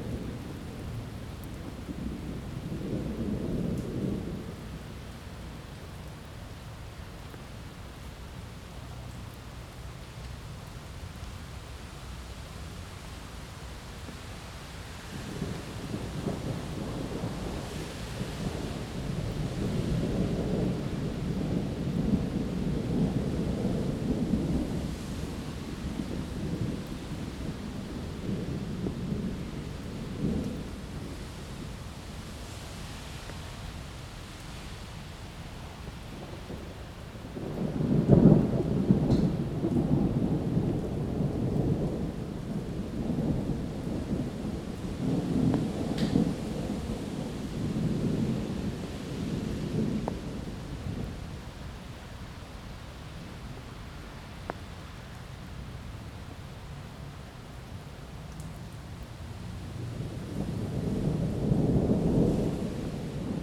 E College Ave, Appleton, WI, USA - Gentle thunderstorm in Appleton WI
Zoom H2, back steps away from the busy street, a lovely gentle thunderstorm rolls in with a light rain.
Wisconsin, United States